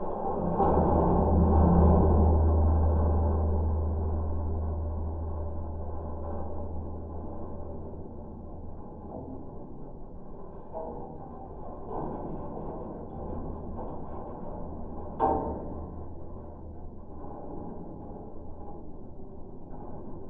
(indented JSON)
{
  "title": "Good Vibrations, Bentonville, Arkansas, USA - Coler Bridge",
  "date": "2021-10-08 11:26:00",
  "description": "Geophone recording from a bridge that suspends above the Good Vibrations Trail in Coler Mountain Bike Reserve.",
  "latitude": "36.38",
  "longitude": "-94.24",
  "altitude": "351",
  "timezone": "America/Chicago"
}